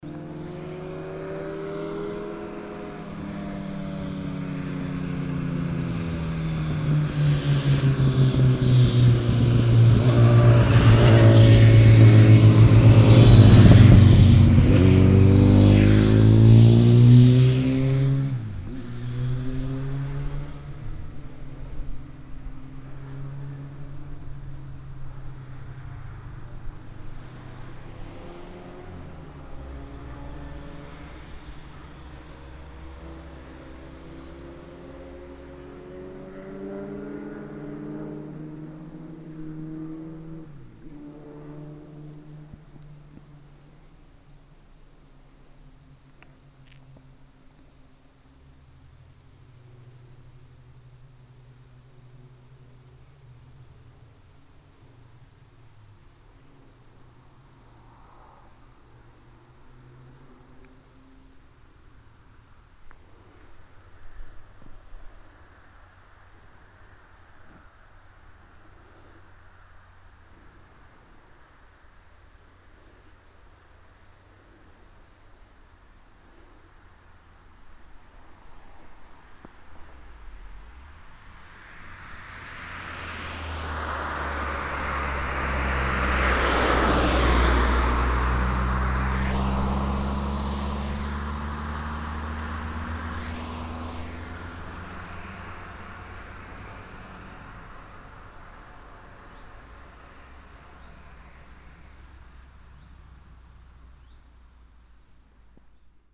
At the street. Two motorbikes and a car passing by.
Clervaux, Weizerstrooss, Verkehr
An der Straße. Zwei Motorräder und ein Auto fahren vorbei.
Clervaux, Weizerstrooss, trafic
Sur la route. Deux motos et une voiture qui passent.
Project - Klangraum Our - topographic field recordings, sound objects and social ambiences
Luxembourg, 2 August 2011